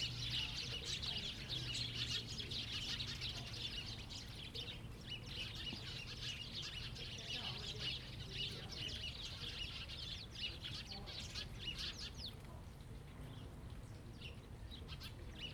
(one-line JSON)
{"title": "下埔下, Jinning Township - Birds singing", "date": "2014-11-02 17:02:00", "description": "Birds singing\nZoom H2n MS+XY", "latitude": "24.44", "longitude": "118.31", "altitude": "11", "timezone": "Asia/Taipei"}